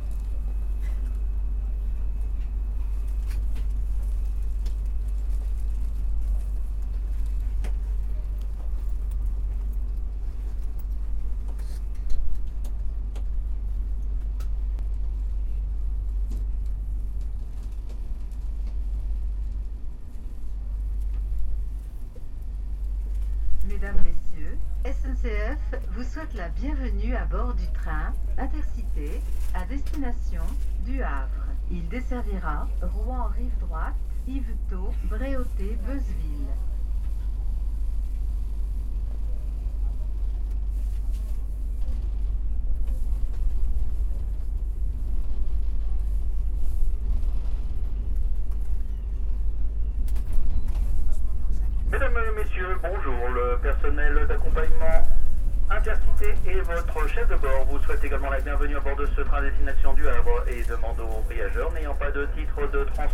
Gare Saint-Lazare, Paris, France - Saint-Lazare station
Taking the train to Rouen in the Paris Saint-Lazare station.